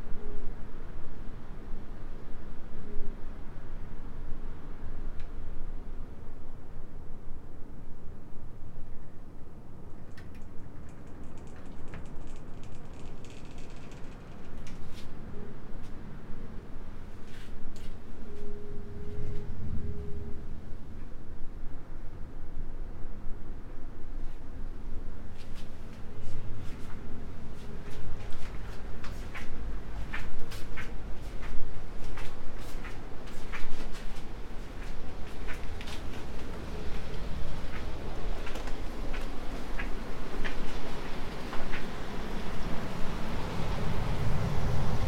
room, Novigrad, Croatia - wind instrument
room as wind instrument, with my soft contribution while opening/closing the doors, steps and thunder
12 September 2012